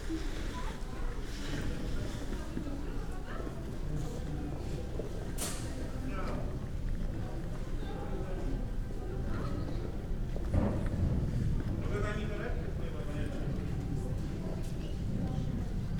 Reszel, Poland, in the castle yard
ambience of castle yard disturbed by plane
12 August, ~12:00